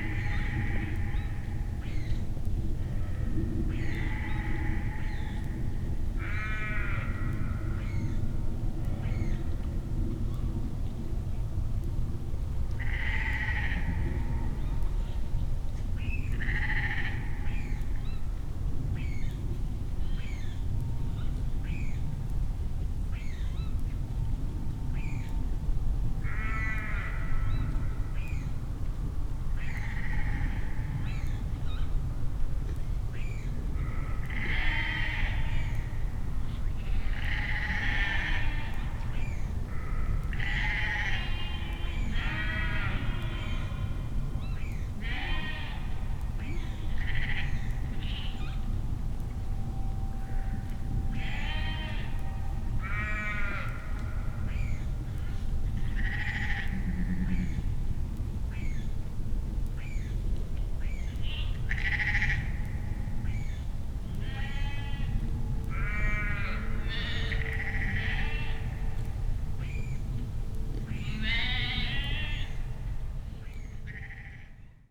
Beselich, Niedertiefenbach - sheep and owl, night ambience with plane drone
an owl (strix aluco, female) joins the sheep. unfortunately a plane is crossing.
(Sony PCM D50, Primo EM172)
Germany